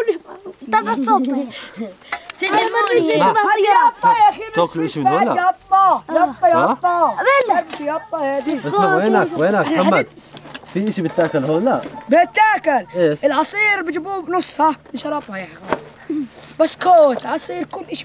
Hebron waste dump, children showing collected metal inside a cave, project trans4m orchestra